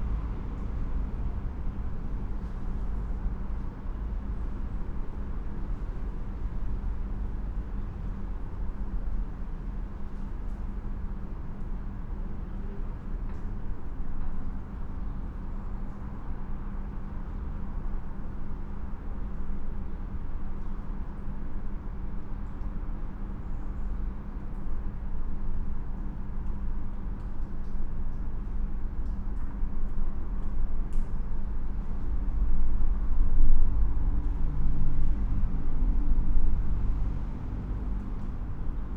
A Train Arrives at Great Malvern Station.
A small event. An announcement, a train arrives and a few people leave.
MixPre 6 II with 2 Sennheiser MKH 8020s on the surface of the platform